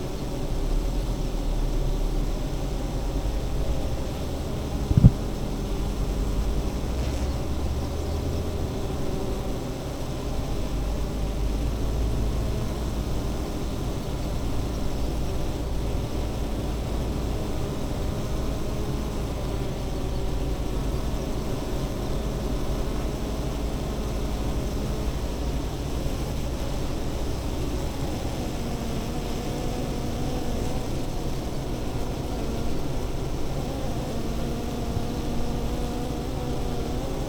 Green Ln, Malton, UK - bee hives ...
bee hives ... Zoom F6 to SASS ... eight hives in pairs ... SASS on floor in front of one pair ... bird song ... calls ... skylark ... yellow wagtail ..